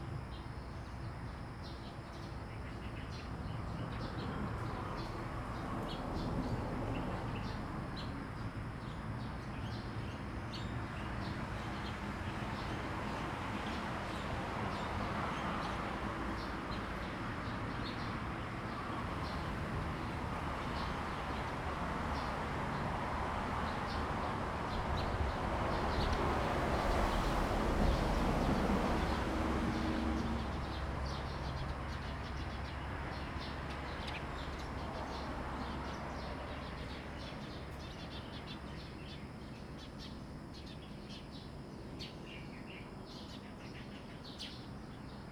美農村, Beinan Township - Birdsong
Birdsong, Traffic Sound, Small village
Zoom H2n MS+ XY
7 September, ~07:00